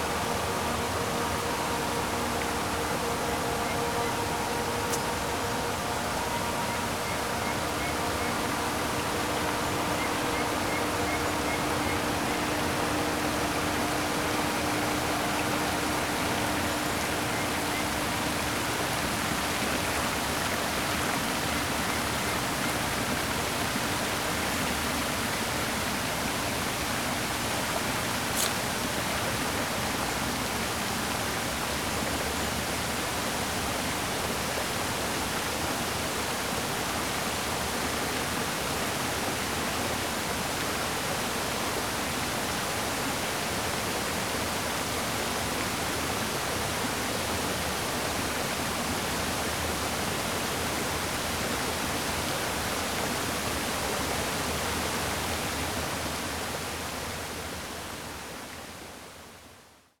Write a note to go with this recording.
static hum of the pump distributing water for the fountains around the pond then moving a bit to catch the burst of artificial waterfall.